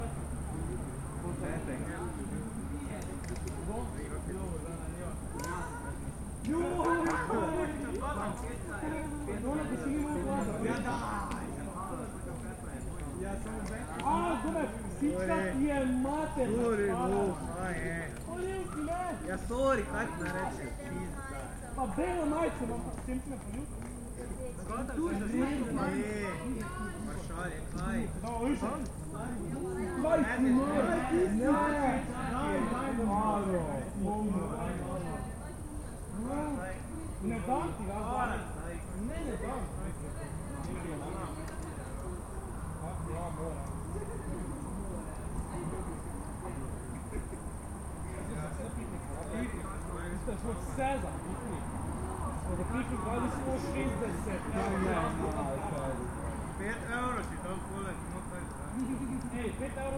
Mestni park, Maribor, Slovenia - corners for one minute
one minute for this corner: Mestni park